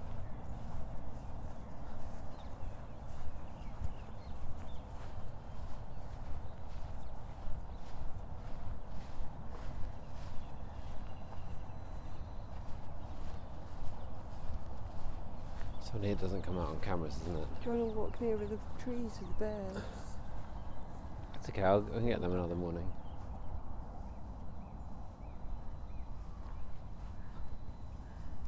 London, UK - Frosty morning dog walk
Taking the dog for a walk on a glorious crisp frosty marsh morning. The dog thinks the windjammer is a wild animal!
England, United Kingdom